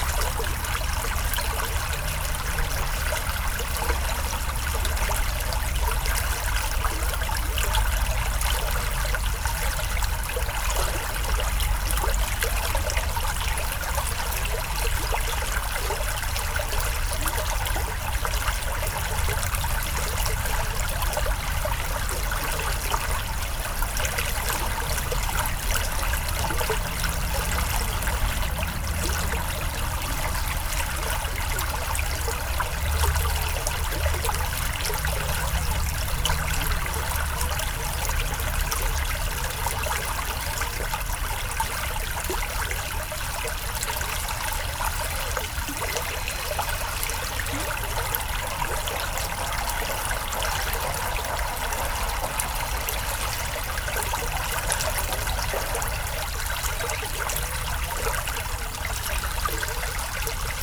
Genappe, Belgique - Cala river
The Cala river is a small river, flowing from Genappe to Court-St-Etienne. It's an industrial landscape approaching the big road called avenue des Combattants.
30 August, ~09:00